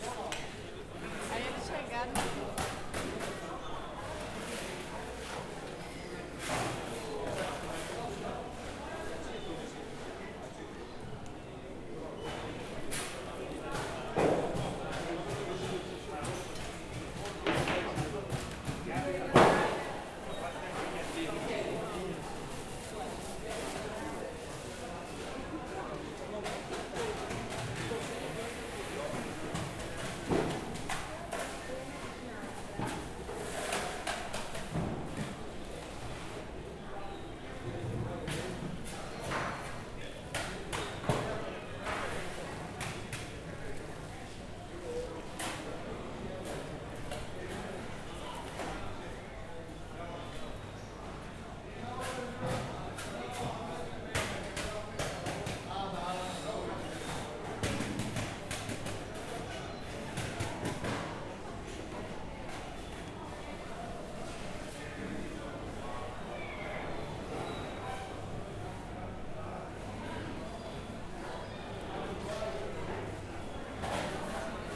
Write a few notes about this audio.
busy market activities, people, seagulls